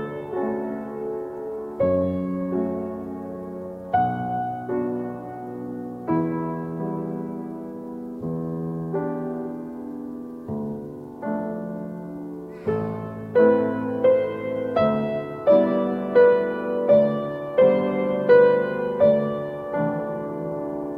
Montreal: Saint-Louis-de-Gonzague Church - Saint-Louis-de-Gonzague Church
equipment used: digital recorder, two dynamic microphones and stands
This is a recording of my wife playing the piano for our baby daughter in the church (Reverberant space with arch ceiling). I used ORTF stereo recording techniques with a distance of 140 cm from the sound source.